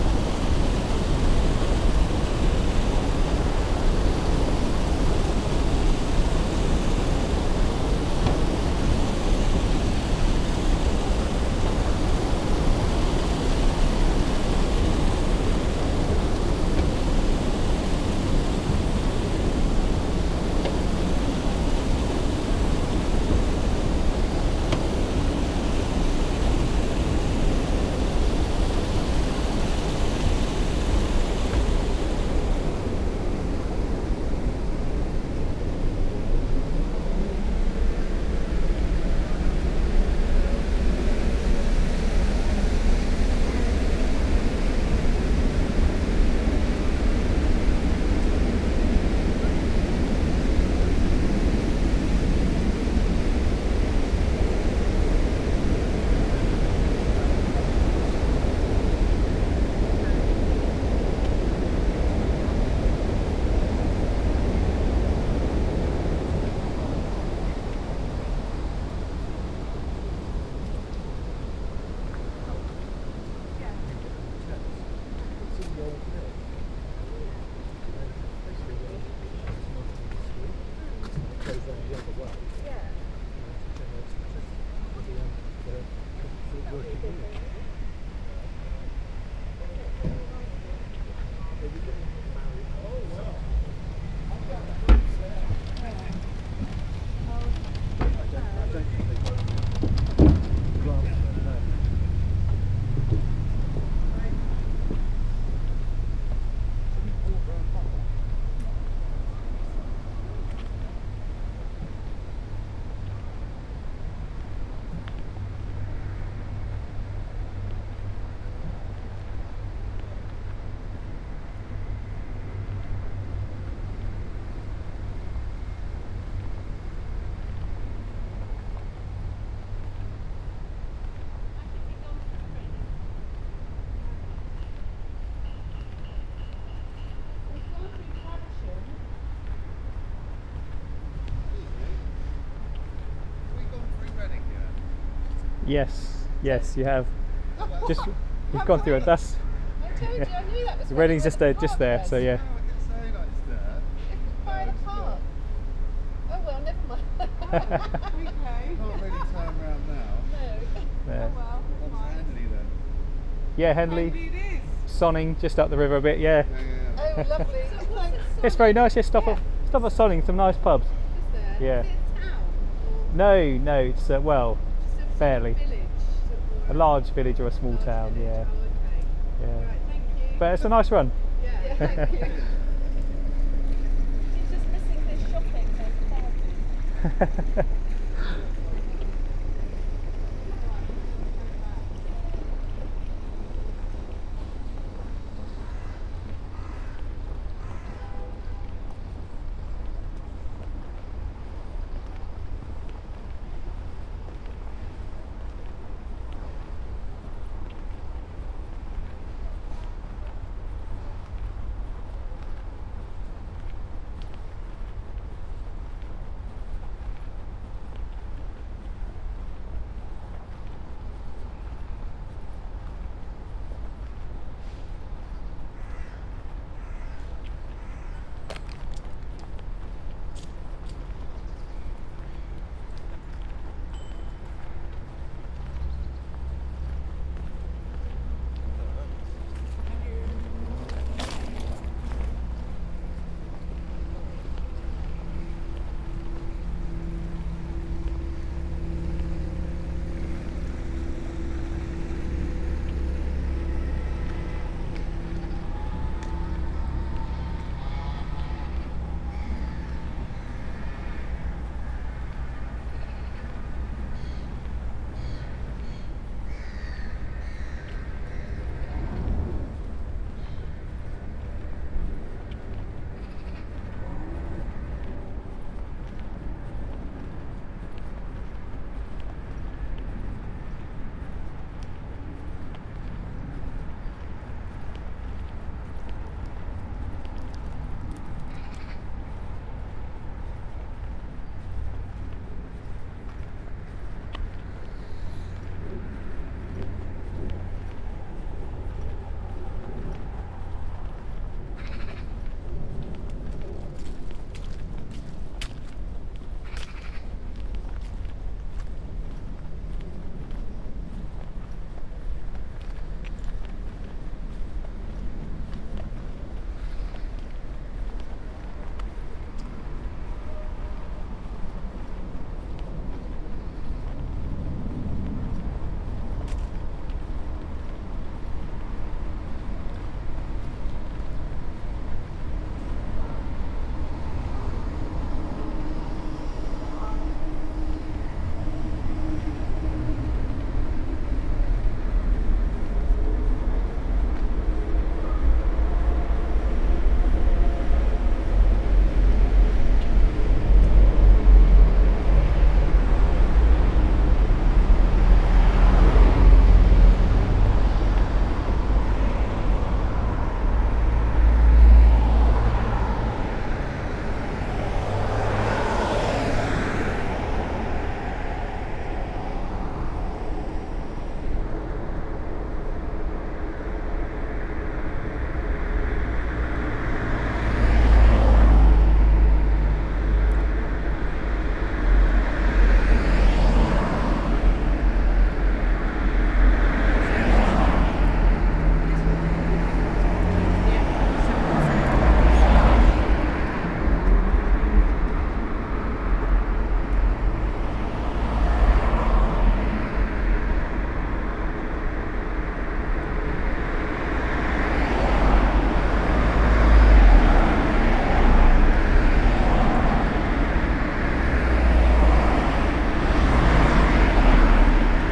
George St, Reading, UK - Walk across Caversham Weir to Reading Bridge
A saunter across Caversham weir and lock, then along the towpath and ending on Reading Bridge (location marked on map). Recorded using a spaced pair of Sennheiser 8020s and a SD MixPre6.
18 September, 12:35